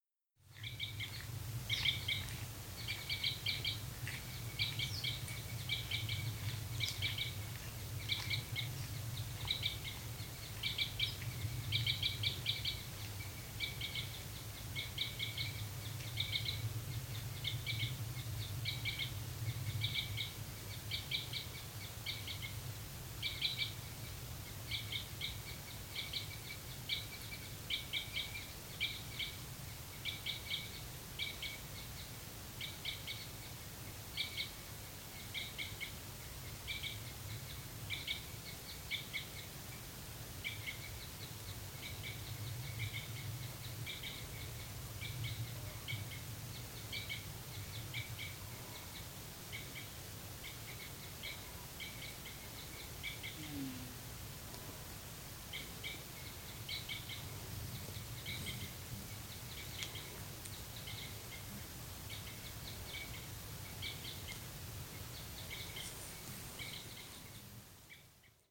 {"title": "Nordmarka, Oslo, Norvegia - Kikutstua", "date": "2019-08-15 07:00:00", "description": "Kikutstua, Nordmarka, Oslo: bird songs in the silent forest.", "latitude": "60.08", "longitude": "10.66", "altitude": "351", "timezone": "Europe/Oslo"}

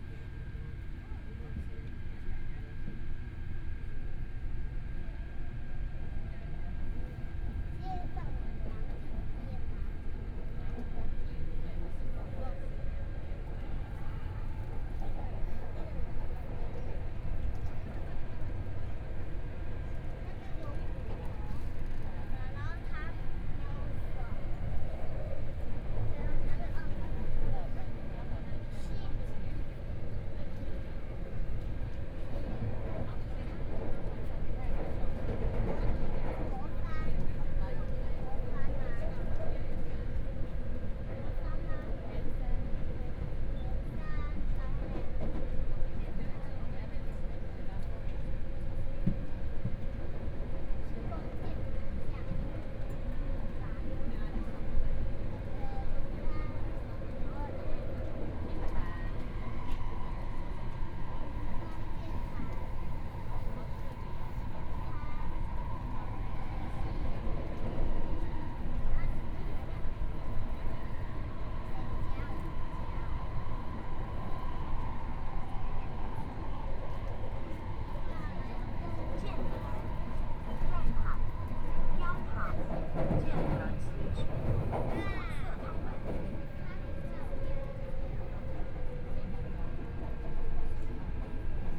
{"title": "Tamsui Line, Taipei City - Tamsui Line (Taipei Metro)", "date": "2014-01-21 20:31:00", "description": "from Minquan West Road Statio. to Mingde Station, Binaural recordings, Zoom H4n + Soundman OKM II", "latitude": "25.07", "longitude": "121.52", "timezone": "Asia/Taipei"}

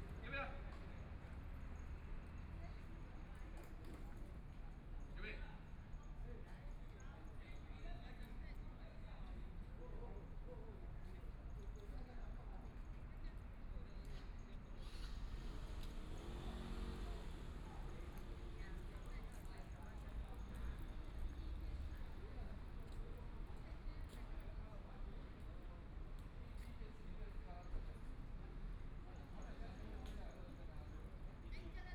{"title": "XinXi Park, Taipei City - in the Park", "date": "2014-02-15 17:32:00", "description": "Sitting in the park, Traffic Sound, Kids playing games in the park, Binaural recordings, Zoom H4n+ Soundman OKM II", "latitude": "25.07", "longitude": "121.53", "timezone": "Asia/Taipei"}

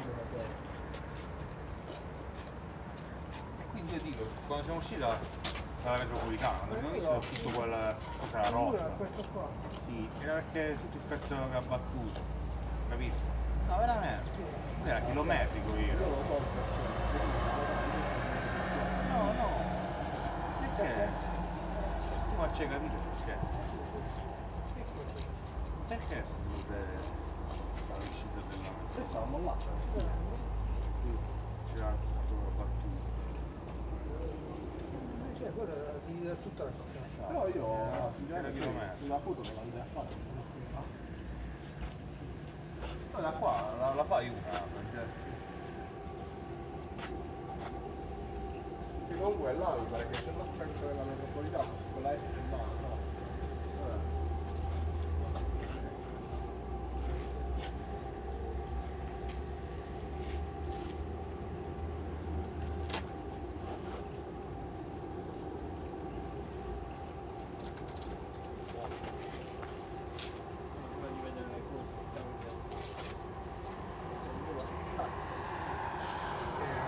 {"title": "Ackerstraße, Wedding, Berlin, Deutschland - Berlin Wall Memorial, Ackerstraße, Berlin - Italian tourists enjoying a panoramic view on Berlin", "date": "2006-04-02 16:22:00", "description": "Berlin Wall Memorial, Ackerstraße, Berlin - Italian tourists enjoying a panoramic view on Berlin.\n[I used an MD recorder with binaural microphones Soundman OKM II AVPOP A3]", "latitude": "52.54", "longitude": "13.39", "timezone": "Europe/Berlin"}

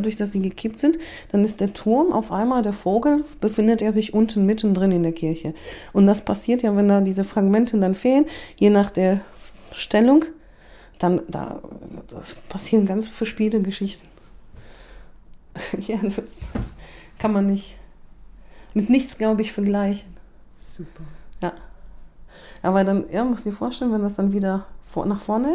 {"title": "Atelier ARTO, Hamm, Germany - The most beautiful view...", "date": "2014-09-23 12:11:00", "description": "Anna Huebsch takes us on a guided tour through Atelier ARTO, up the stairs, to hidden places, and brigged up windows of the former print workshop… to “see” the best view on the Lutherkirche from ARTO’s upstairs kitchen…. (a “cubist” reflection in an all-glass house front)…\nAnna Hübsch führt uns durch Atelier ARTO, die Holztreppe herauf, zu verborgenen Nischen, und zugemauerten Fenstern in was war ehemals eine Druckereiwerkstatt… Sie führt uns an ein Fenster zum Hof, von dem man den besten Ausblick “auf die Lutherkirche” bewundern kann…\nTo hear more about ARTO, the activities, the stories and histories continue listening here:", "latitude": "51.68", "longitude": "7.82", "altitude": "65", "timezone": "Europe/Berlin"}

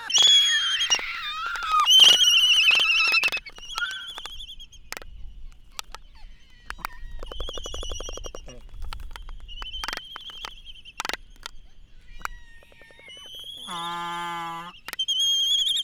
{"title": "United States Minor Outlying Islands - Laysan albatross dancing ......", "date": "2012-03-16 18:55:00", "description": "Laysan albatross dancing ... Sand Island ... Midway Atoll ... open lavalier mics on mini tripod ... voices ... carts ... and a break ...", "latitude": "28.22", "longitude": "-177.38", "altitude": "9", "timezone": "GMT+1"}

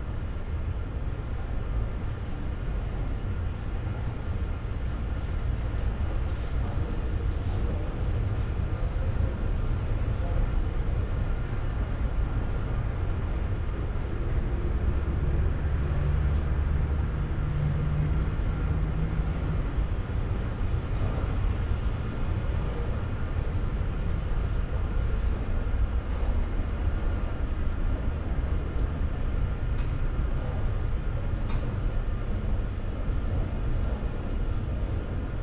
{"title": "Kottbusser Tor, Berlin, Deutschland - soundwalking in the pandemic", "date": "2020-03-25 15:35:00", "description": "Berlin, Kottbusser Tor, walking through the station on different levels. Only a fraction of people are here, compared to normal crowded workdays, trains are almost empty\n(Sony PCM D50, Primo EM172)", "latitude": "52.50", "longitude": "13.42", "altitude": "38", "timezone": "Europe/Berlin"}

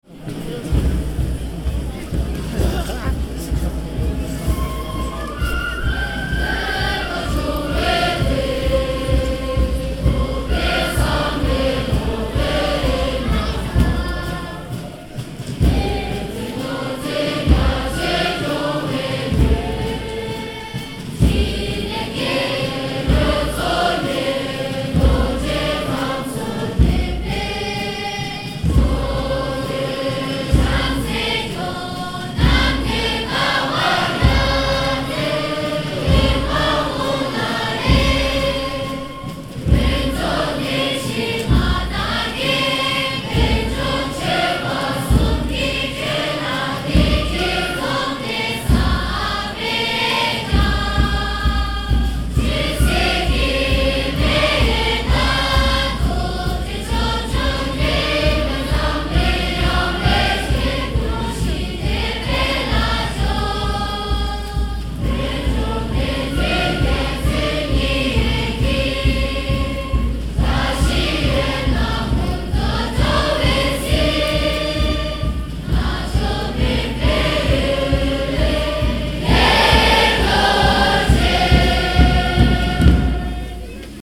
{"title": "Tibetan National Anthem, Tsuglagkhung Complex, Dalai Lama temple", "date": "2011-08-08 19:26:00", "description": "During the new Tibetan Prime Ministers inauguration ceremony at the Dalai Lama temple, the crowd sang the Tibetan National Anthem. Soo beautiful. Crowds, national anthem, song, tibet", "latitude": "32.23", "longitude": "76.32", "altitude": "1509", "timezone": "Asia/Kolkata"}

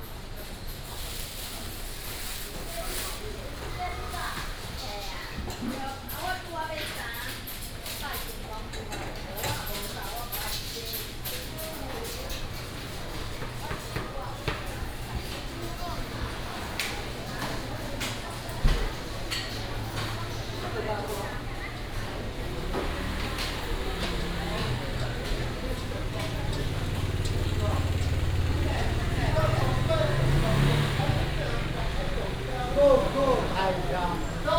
{"title": "竹東中央市場, Zhudong Township - Walking through the market", "date": "2017-01-17 11:29:00", "description": "Walking through the market", "latitude": "24.74", "longitude": "121.09", "altitude": "123", "timezone": "GMT+1"}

